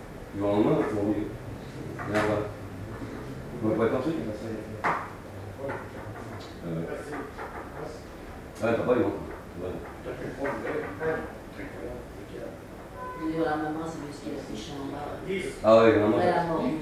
Lyon, Rue Hippolyte Flandrin, Aux Armes de Savoie, minidisc recording from 1999.